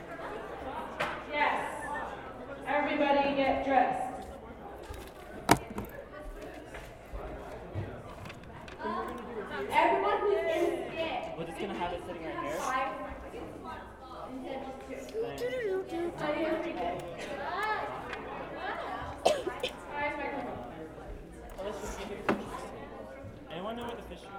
UMC Glennmiller Ballroom - VSA Tet Show Rehearsal
CO, USA, 2 February, 14:35